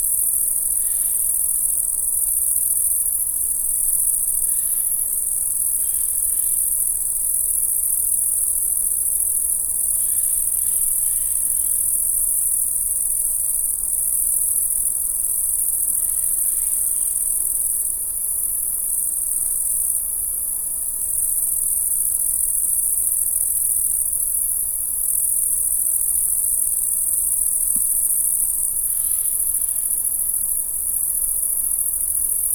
Unnamed Road, Horní Libchava, Česko - grasshoppers
The sound of grasshoppers on a forest road in the summer sun. Tascam DR-05, build microphones